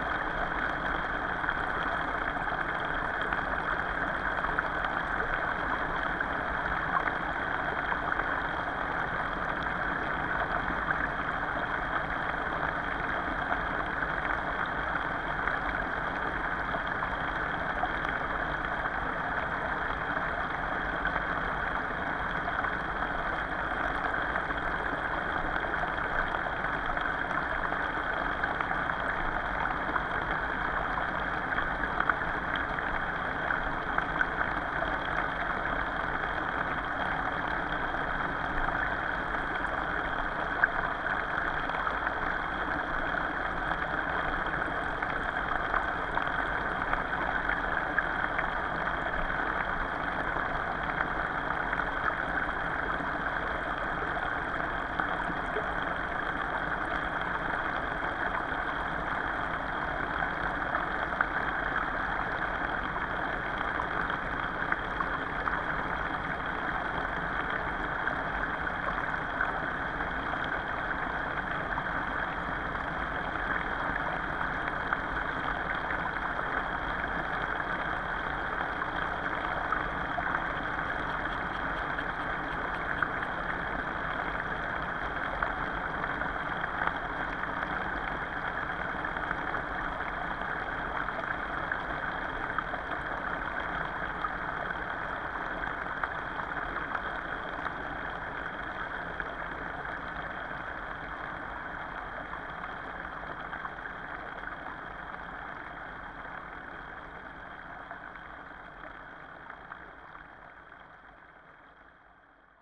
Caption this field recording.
hidrophones recording of the underwater pipe found in the little river